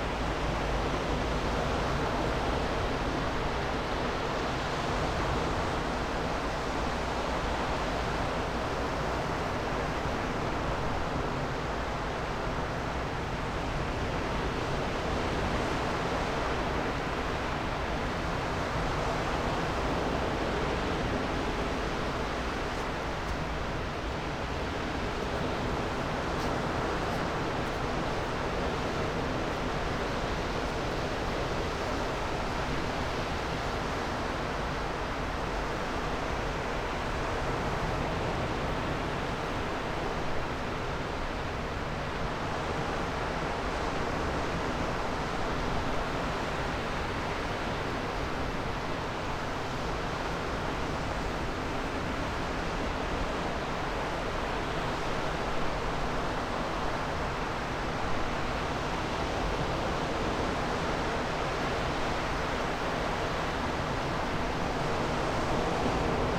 Cleveland Way, Whitby, UK - incoming tide on a slipway ...
incoming tide on a slip way ... SASS ... background noise ... footfall ... dog walkers etc ...